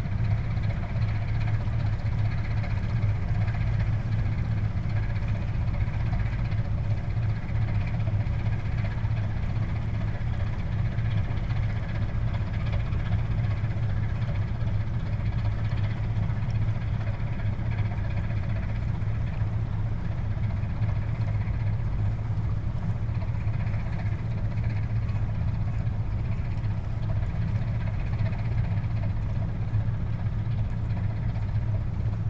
Hermann-Schneider-Allee, Karlsruhe, Deutschland - cargo ship upstream - Frachter bergwärts
A sunny afternoon near the river Rhine.
Equipment: Tascam HD-P2; AKG SE 300B / CK91
Recording: ORTF